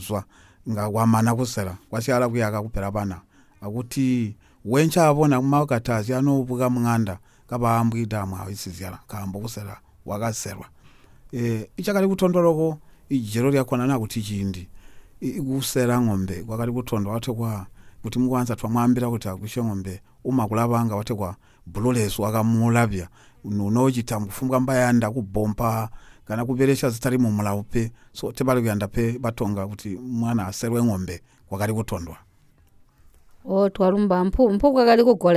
Chief Siansali's Court, Binga, Zimbabwe - Sir, please tell me about BaTonga customs...
Regina Munkuli interviews Mr. Mwinde, Historian at Chief Siansali’s Court, about the traditional tribal identification which was customary among Batonga women, namely the removal of the front six teeth. Regina then asks about the traditional marriage among the Batonga and Samuel Mwinde describes in detail how, and through whom a marriage was arranged between two families. The interview also briefly touches upon the performance of Ngoma Buntibe; Mr Mwinde explains that traditionally, it is played to honour a married man who has passed on, mainly, for a chief or headman. Traditionally, it is only performed in the context of a funeral.